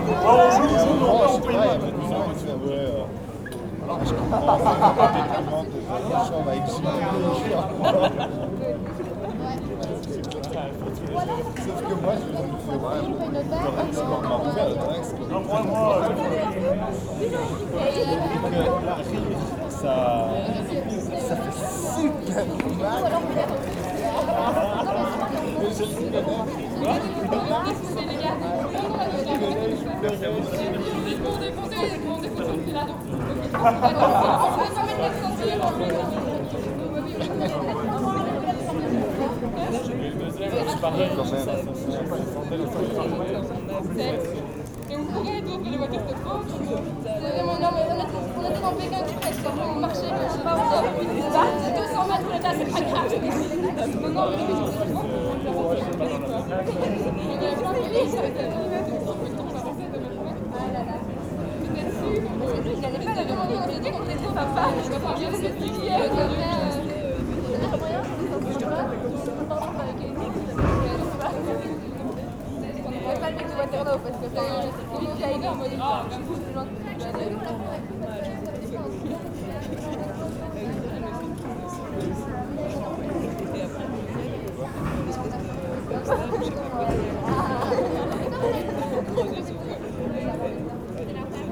On the first sunny sunday of this year, people are lazying with great well-being on the bars terraces.